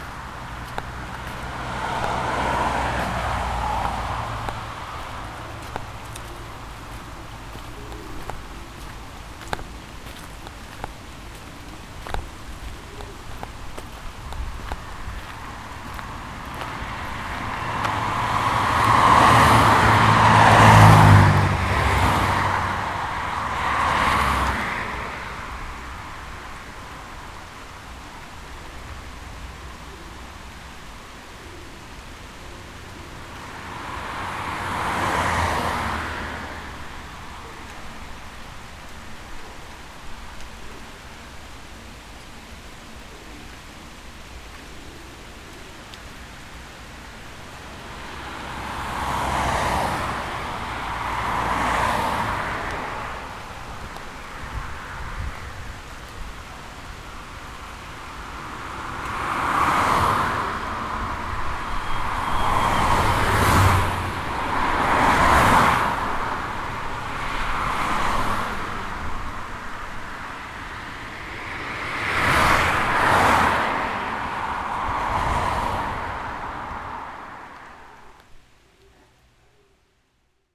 Road Václavice, Provodov-Šonov, Czechia - silnice první třídy Václavice
Chůze lesem směrem k silnici a na křižovatku s pomníkem padlým vojákům 1866